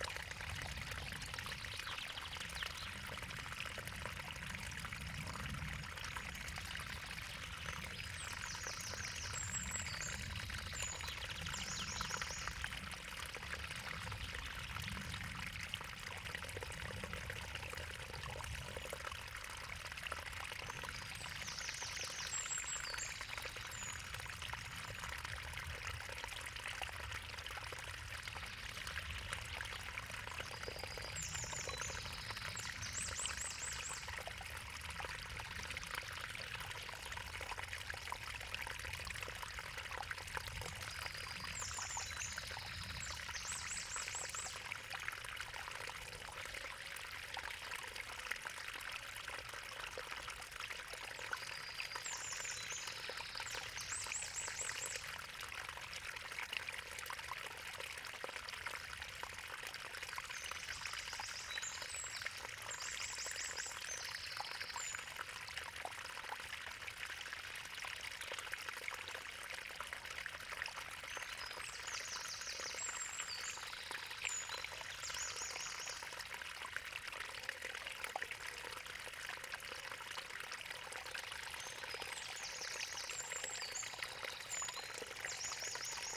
Beselich Niedertiefenbach, Ton - little creek 50m from source
little creek 50m from the source. this creek runs dry in summertime since it depends on the water level of the pond.
Germany, 3 June, ~07:00